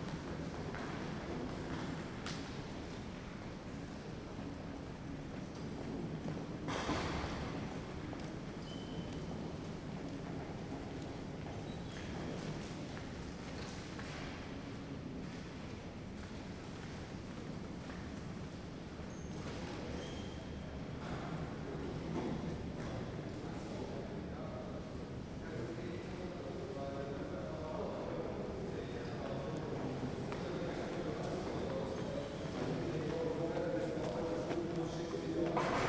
Berlin Messe, hall 7, hallway, steps, elevators, ambience
Berlin, Deutschland